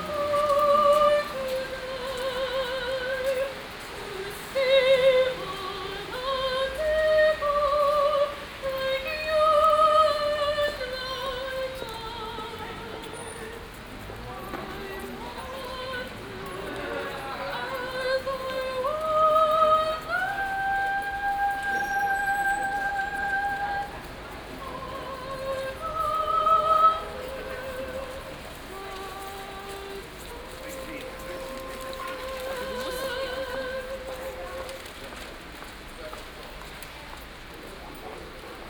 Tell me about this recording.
afternoon, crowded, christmas singer in front of dussmanns book store. steps. coins in tin can.